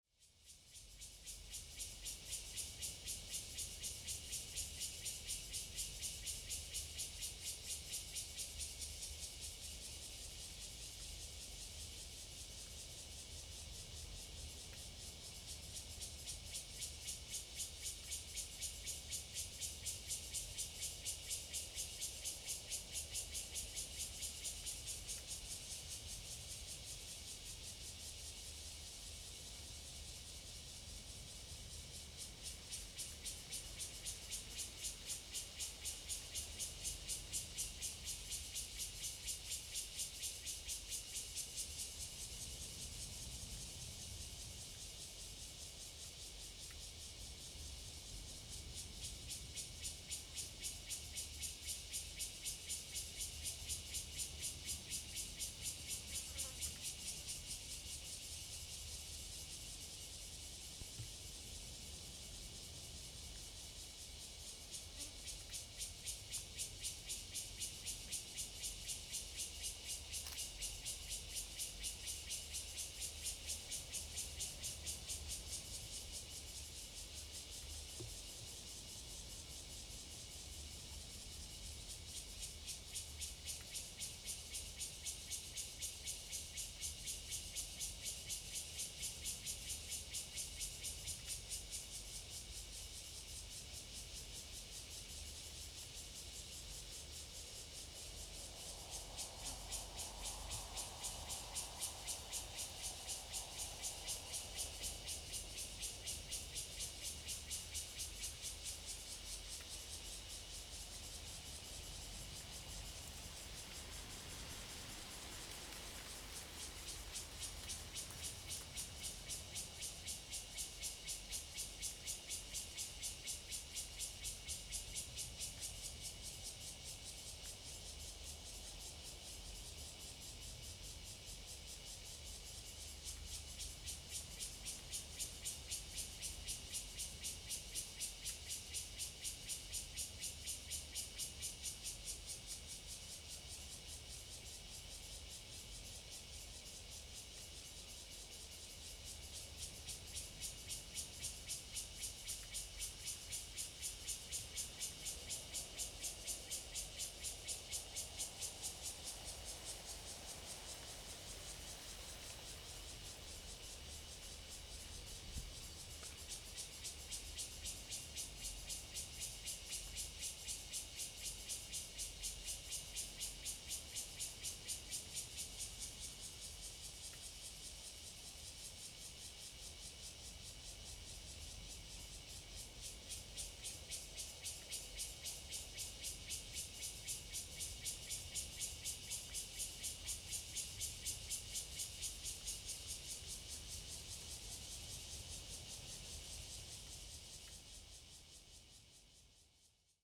In the woods, Cicadas sound, Birdsong sound, The weather is very hot
Zoom H2n MS+XY
Hualien County, Taiwan, 27 August 2014